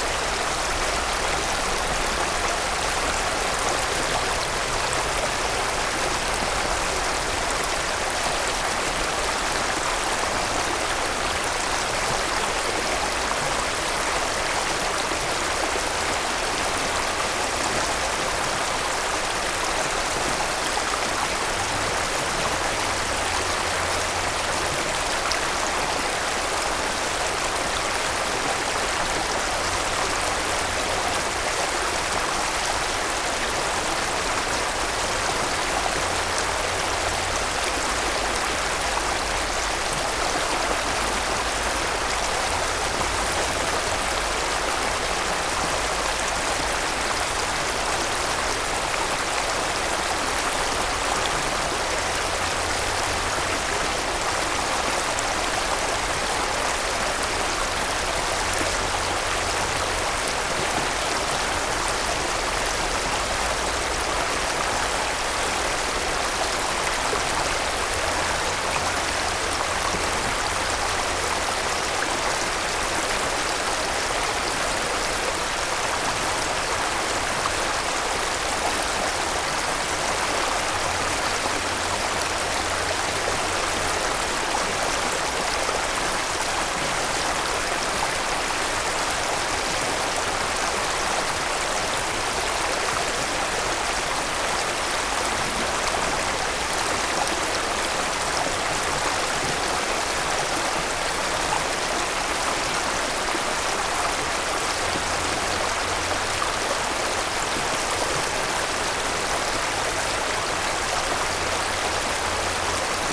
Scarning Meadow, Scarning, Dereham, Norfolk - Bubbling stream
Scarning Meadows is a County Wildlife Site with public access within a broad, shallow valley of a small tributary of the River Wensum. It had been raining for several days and the stream was flowing strongly. Recorded with a Zoom H1n with 2 Clippy EM272 mics arranged in spaced AB.
England, United Kingdom, 26 May 2021